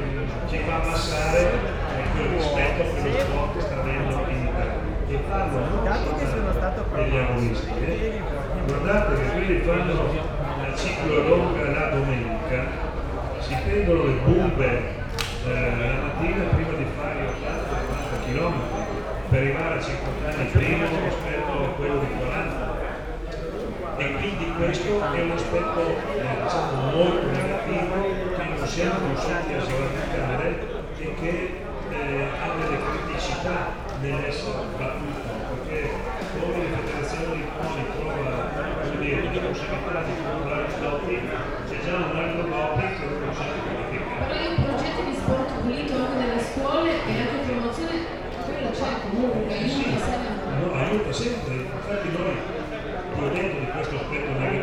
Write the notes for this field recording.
hearing to political propaganda while eating fishes, (not)understanding is not so minor part of tasteful pleasure of eating at this public gathering, project ”silent spaces”